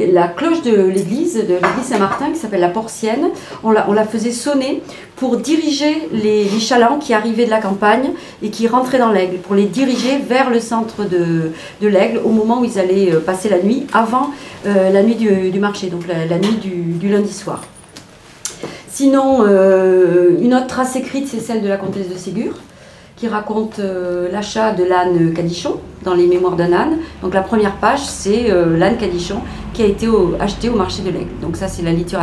L'Aigle, France - Histoire du marché de l'Aigle
Histoire du marché de l'Aigle racontée au Cafisol.
11 February 2014, ~16:00